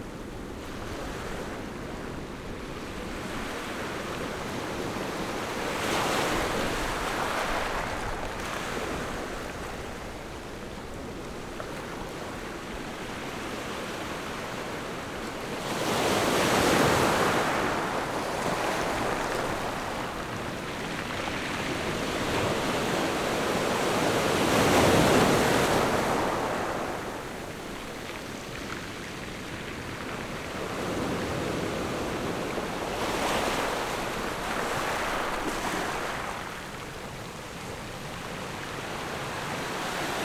Jizazalay, Ponso no Tao - Small fishing port
Waves and tides, Small fishing port
Zoom H6 + Rode NT4